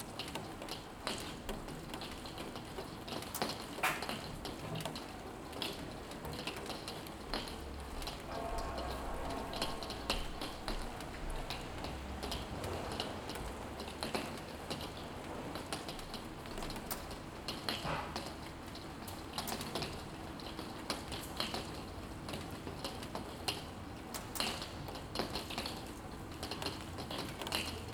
Gràcia, Barcelona, Spain - Morning rain, June 25th 2015
Morning rain recorded from a window facing a courtyard using Zoom H2n.